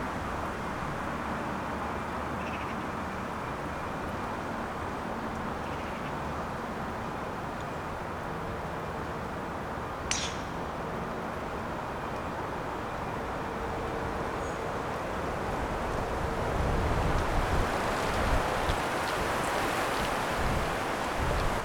Merrick Park, Bournemouth, UK - golf at Merrick Park
20 September 2012